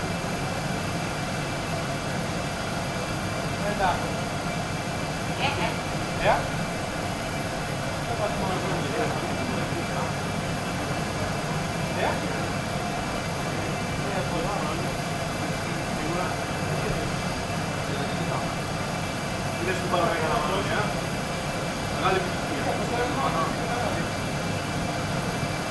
Ajia Rumeli, Crete, on of the streets - cooking rabits
two large pots filled with broth and game on gas burners. the chef talking with friends while stirring the dish.
29 September 2012, ~16:00